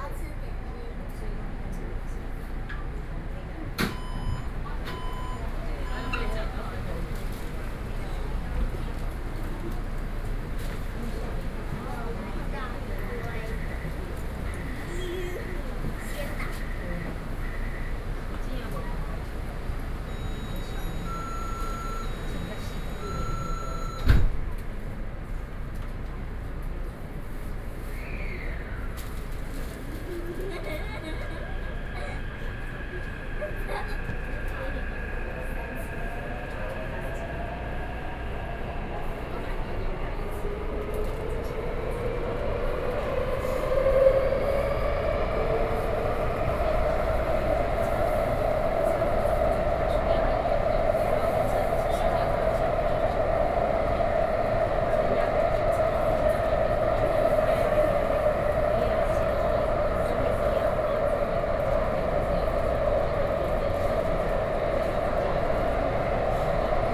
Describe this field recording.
from Zhongshan Elementary School Station to Zhongxiao Xinsheng Station, Sony PCM D50 + Soundman OKM II